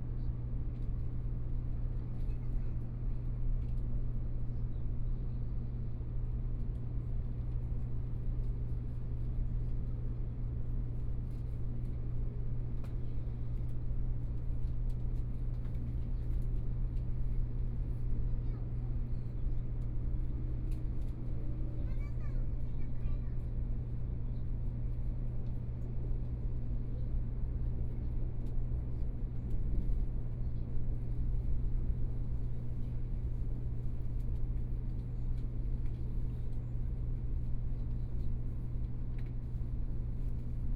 Taiwan High Speed Rail, from Taipei Station to Banqiao Station, Messages broadcast station, Zoom H4n+ Soundman OKM II
New Taipei City, Banqiao District, 華翠大橋(萬華)